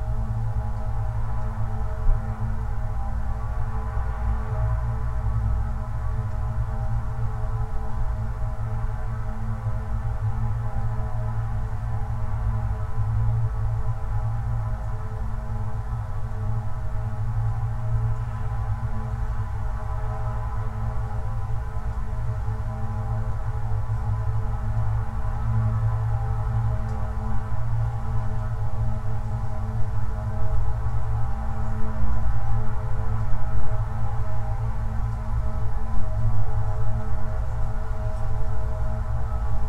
Utena, Lithuania, inside construction
small omni mics in abandoned metallic tube